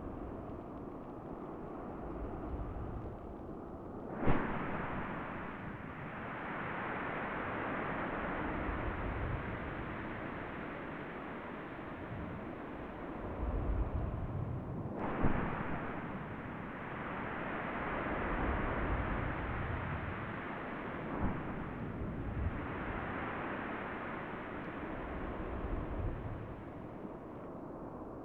Kuršių Nerija National Park, Lithuania, hydrophones in sand
two hydrophones hidden on seashore's sand